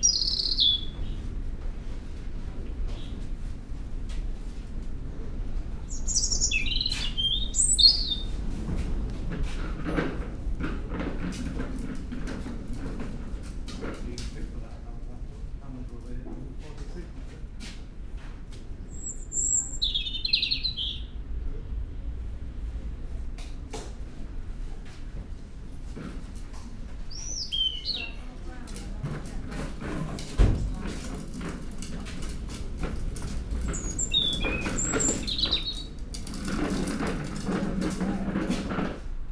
{
  "title": "Reighton Nursery, Hunmanby Rd, Filey, United Kingdom - resident robin singing ...",
  "date": "2019-03-15 11:20:00",
  "description": "resident robin singing ... Reighton Nurseries ... the bird is resident and sings in the enclosed area by the tills ... it is not the only one ... lavalier mics clipped to bag ... it negotiates the sliding doors as well ... lots of background noise ... voices etc ...",
  "latitude": "54.16",
  "longitude": "-0.28",
  "altitude": "110",
  "timezone": "Europe/London"
}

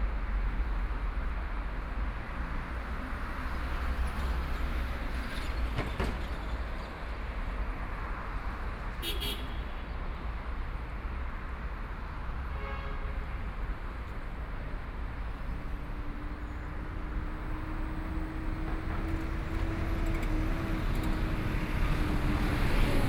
{
  "title": "Huangxing Road, Shanghai - walking in the street",
  "date": "2013-11-20 20:55:00",
  "description": "walking in the street, Binaural recording, Zoom H6+ Soundman OKM II",
  "latitude": "31.30",
  "longitude": "121.51",
  "altitude": "8",
  "timezone": "Asia/Shanghai"
}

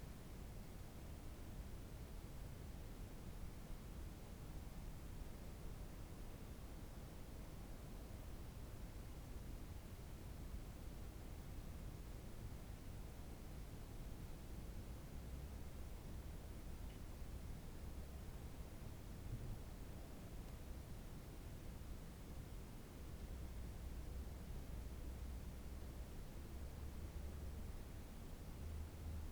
{
  "title": "seehausen/uckermark: dorfstraße - the city, the country & me: area of an abandoned recreation home",
  "date": "2011-11-13 00:47:00",
  "description": "passing car\nthe city, the country & me: november 13, 2011",
  "latitude": "53.21",
  "longitude": "13.88",
  "altitude": "18",
  "timezone": "Europe/Berlin"
}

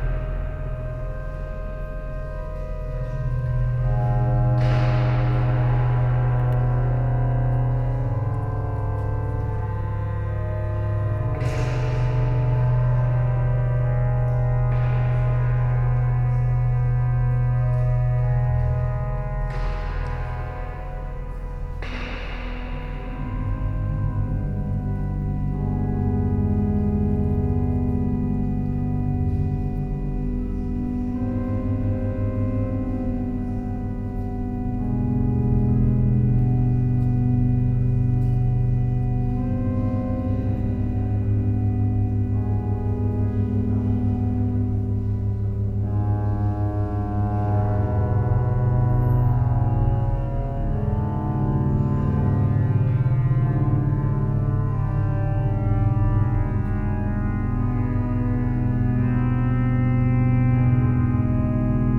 {
  "title": "Centre Nord, Dijon, France - Cathédrale Saint-Bénigne de Dijon",
  "date": "2012-05-20 15:47:00",
  "description": "zoom H4 with SP-TFB-2 binaural microphones",
  "latitude": "47.32",
  "longitude": "5.03",
  "altitude": "249",
  "timezone": "Europe/Paris"
}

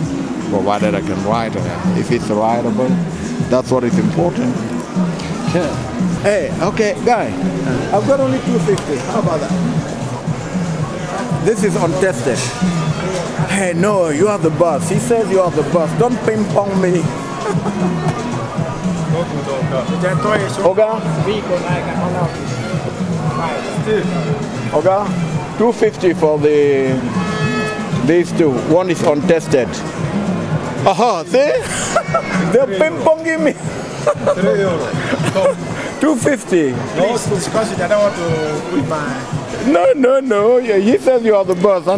{
  "title": "Vallilan makasiinit (Valtterin kirpputori), Aleksis Kiven katu, Helsinki, Suomi - At the flea market Valtteri",
  "date": "2012-03-06 12:18:00",
  "description": "A man from Africa is looking for a video projector at the \"Valtteri\" flea market in Vallila, Helsinki.",
  "latitude": "60.19",
  "longitude": "24.95",
  "timezone": "Europe/Helsinki"
}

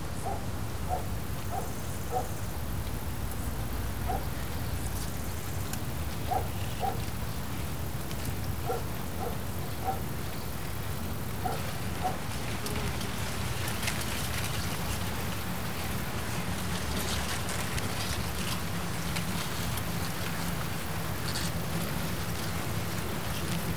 {
  "title": "Morasko - corn field",
  "date": "2015-08-09 11:13:00",
  "description": "leaves of corn gently brushing against each other. on one hand a very relaxing swoosh but unsettling and ghostly on the other.",
  "latitude": "52.47",
  "longitude": "16.91",
  "altitude": "101",
  "timezone": "Europe/Warsaw"
}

